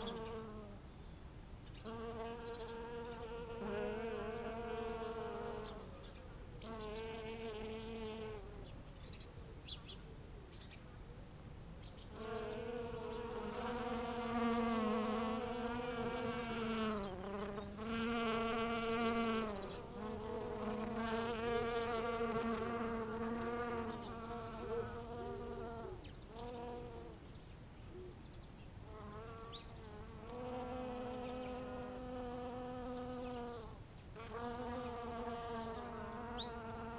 Kirchmöser Ost - bees collecting from Rucola / rocket blossoms
Garden, Kirchmöser, rocket (Rucola) all over the ground, bees are collecting nectar from the blossoms
(Sony PCM D50)
Brandenburg, Deutschland